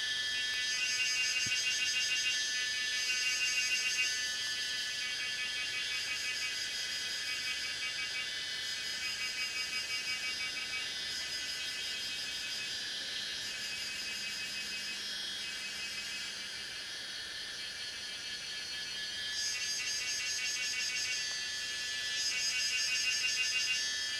水上巷桃米里, Taiwan - Cicadas sound
early morning, Faced with bamboo, Cicadas sound
Zoom H2n Spatial audio